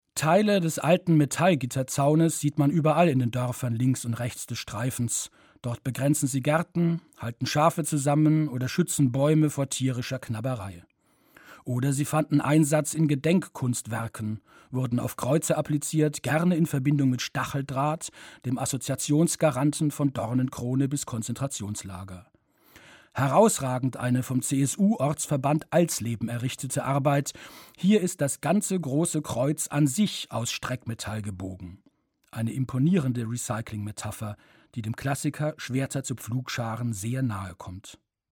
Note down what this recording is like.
Produktion: Deutschlandradio Kultur/Norddeutscher Rundfunk 2009